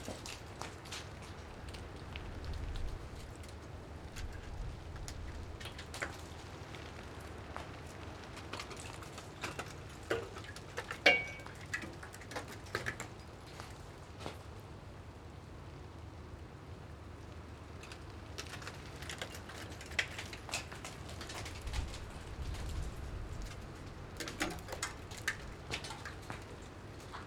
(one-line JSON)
{"title": "Lipa, Kostanjevica na Krasu, Slovenia - Ice falling from tower", "date": "2020-12-03 10:17:00", "description": "Ice falling down from tv, radio antena tower on mount Trstelj, Slovenia 3.12.2020. In the background you can hear cracking sleet on a bush.\nRecorded with Sounddevices MixPre3 II and Sennheiser ME66, HPF60hz.", "latitude": "45.86", "longitude": "13.70", "altitude": "629", "timezone": "Europe/Ljubljana"}